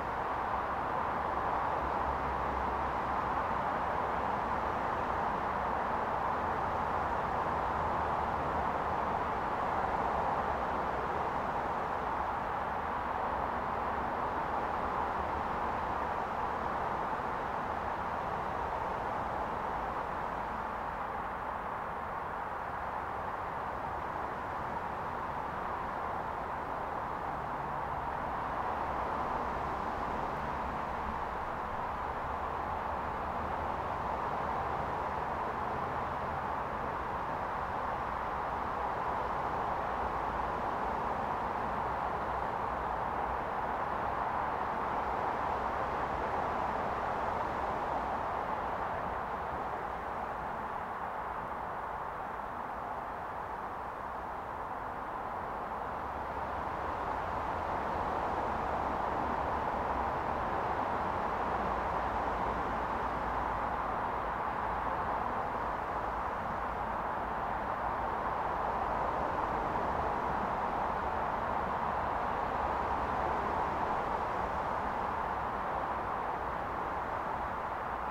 This is the sound of the N11 motorway and the birds in the trees, at the site where a road-protest was once en-camped. You can hear the traffic, the stream has dried up at this place (though it is still burbling away further down the valley). This was once a place where people gathered in 1997 live in and protect the nature reserve from a road-expansion project. Recorded with the EDIROL R09, sat at a picnic bench, listening to dog-walkers leaving in their cars, to the traffic on the main road, and the quietness of the trees themselves.
Co. Wicklow, Ireland